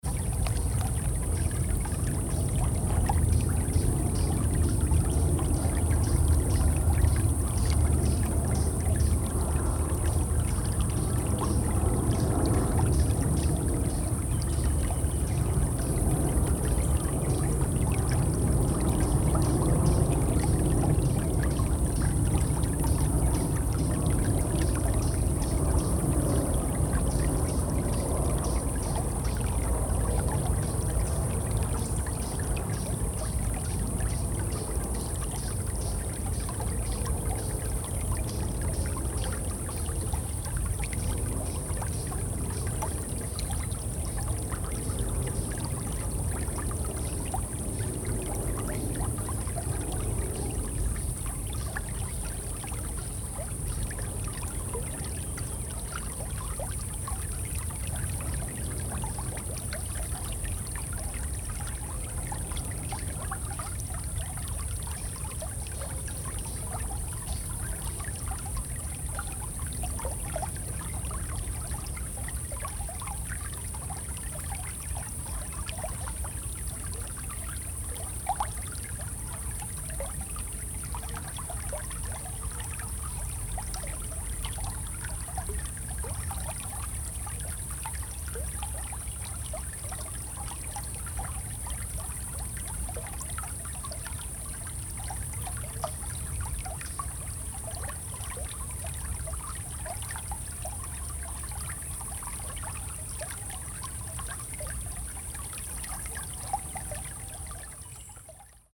Okuka Rjecine, Martinovo Selo, Rjecina river Jet plane
River flow. summer time @ Martinovo selo, Grobnik region, Croatia. Jet plane approaching and passing by